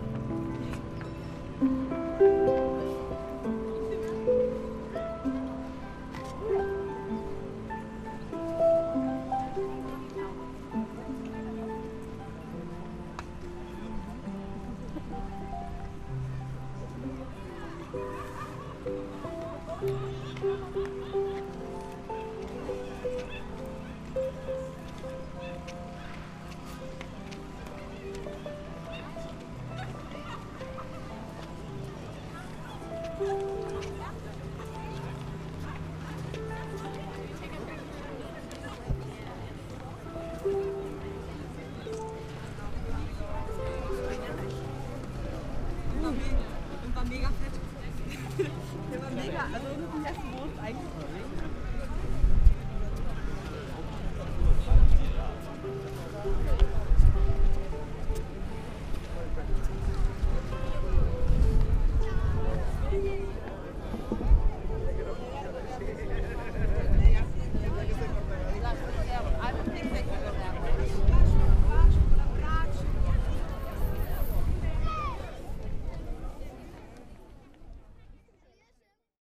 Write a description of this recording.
on the stairs of Sacre Coeur a street musician plays on harp Hallelujah